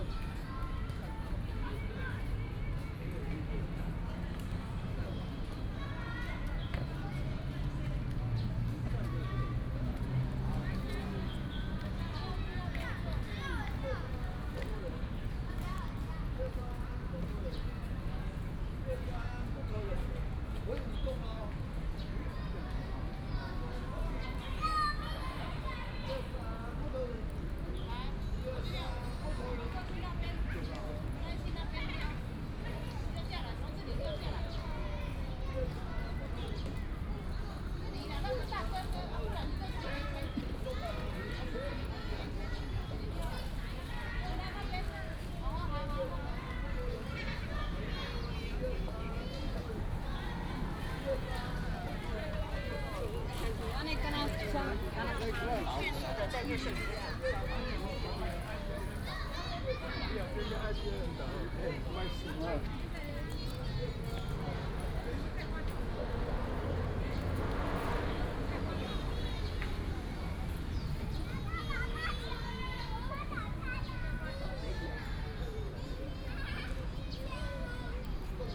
{"title": "板橋石雕公園, 板橋區, New Taipei City - in the Park", "date": "2015-07-29 17:28:00", "description": "Children Playground, Footsteps", "latitude": "25.03", "longitude": "121.47", "altitude": "10", "timezone": "Asia/Taipei"}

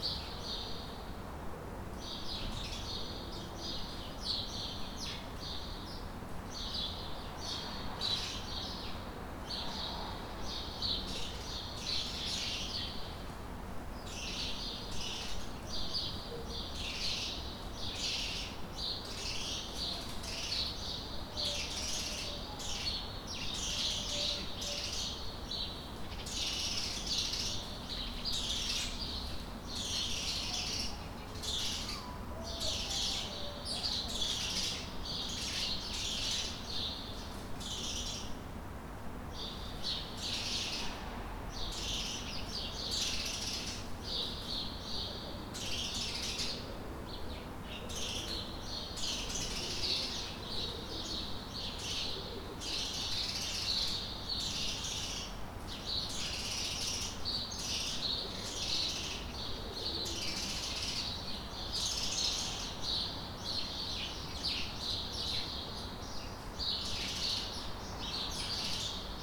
{"title": "Boulevard de Lyon, Strasbourg, France - Birds and wind - courtyard", "date": "2020-10-30 08:06:00", "description": "The birds in my courtyard, in the morning of the first day of confinment in France.\nRecorded with ZOOM H1 on my balcony.", "latitude": "48.58", "longitude": "7.73", "altitude": "146", "timezone": "Europe/Paris"}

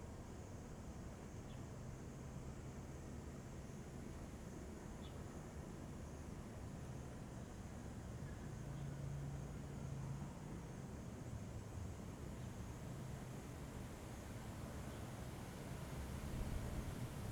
{"title": "都歷遊客中心, Chenggong Township - In the parking lot", "date": "2014-09-06 16:45:00", "description": "In the parking lot\nZoom H2n MS+ XY", "latitude": "23.02", "longitude": "121.32", "altitude": "65", "timezone": "Asia/Taipei"}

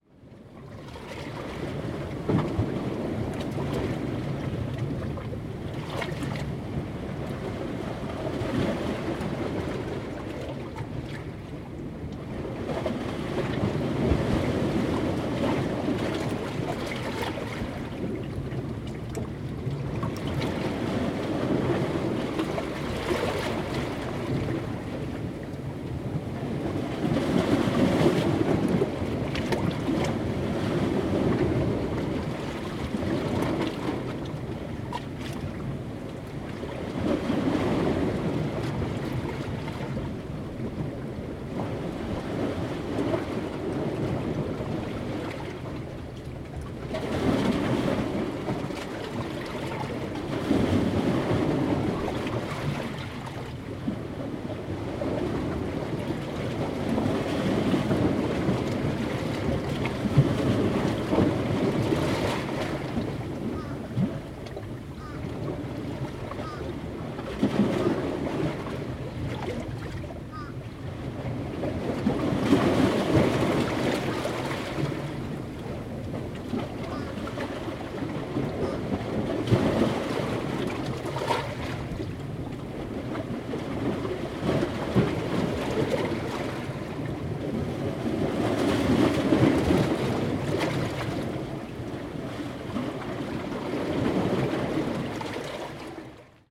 {"title": "Longboat Pass Inlet Jetty, Bradenton Beach, Florida, USA - Longboat Pass Inlet Jetty", "date": "2021-03-23 12:36:00", "description": "Recording of surf traveling within the 60-year-old rock and timber jetty at south end of Anna Maria Island.", "latitude": "27.44", "longitude": "-82.69", "timezone": "America/New_York"}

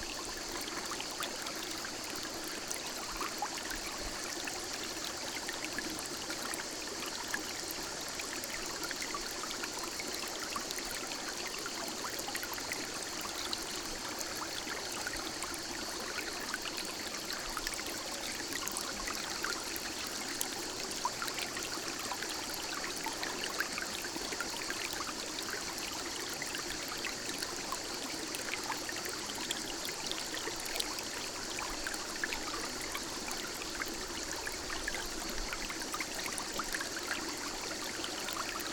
{"title": "Unnamed Road, Peypin-dAigues, France - La source du Mirail", "date": "2020-07-19 17:25:00", "description": "Le doux clapotis de l'eau de la source du Mirail à l'ombre des arbres", "latitude": "43.79", "longitude": "5.54", "altitude": "464", "timezone": "Europe/Paris"}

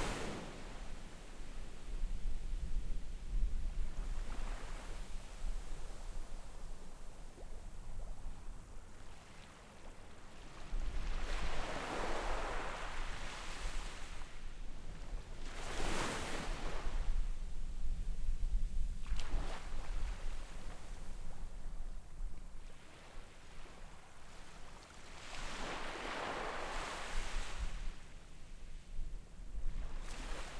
{"title": "內鼻灣海濱公園, Beigan Township - In the beach", "date": "2014-10-13 11:33:00", "description": "Sound of the waves, Very hot weather, In the beach\nZoom H6 XY", "latitude": "26.22", "longitude": "120.00", "altitude": "101", "timezone": "Asia/Taipei"}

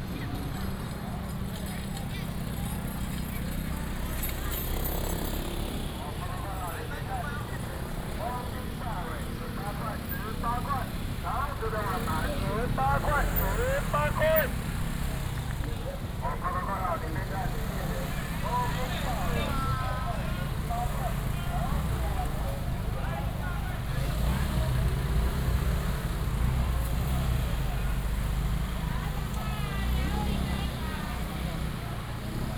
{"title": "Ln., Minsheng Rd., East Dist., Hsinchu City - Vegetable market", "date": "2017-02-07 11:34:00", "description": "Vegetable market, motorcycle, The sound of vendors", "latitude": "24.81", "longitude": "120.98", "altitude": "24", "timezone": "GMT+1"}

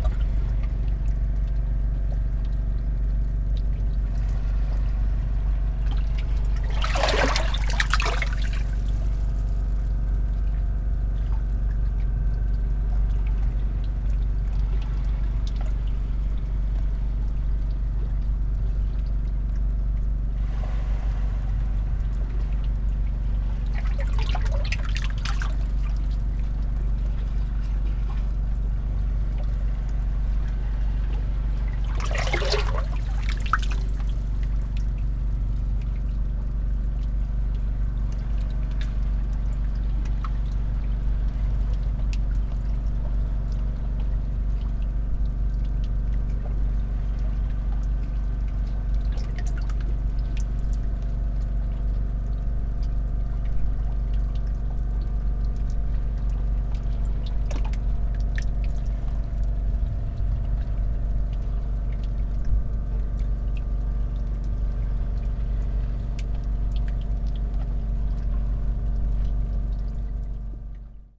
{"title": "small round pool, Novigrad, Croatia - eavesdropping: flux", "date": "2012-09-10 23:38:00", "description": "round pool next to the sea; above the opening for seawater, small waves and engine of fishing boat - at night", "latitude": "45.31", "longitude": "13.56", "altitude": "3", "timezone": "Europe/Zagreb"}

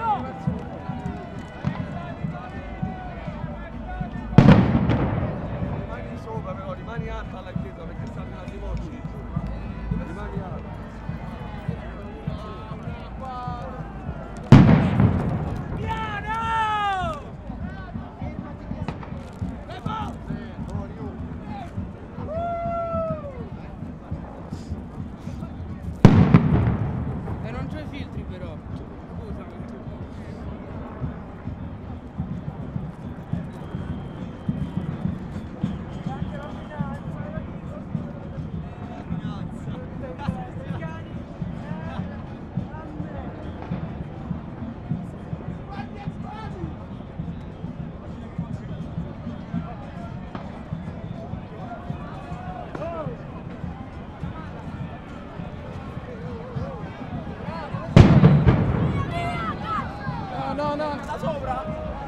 {"title": "Piazza S.Giovanni", "date": "2011-10-16 17:29:00", "description": "Rome Riot\nThe explosions are provoked by demostrants homemade bomb", "latitude": "41.89", "longitude": "12.51", "altitude": "45", "timezone": "Europe/Rome"}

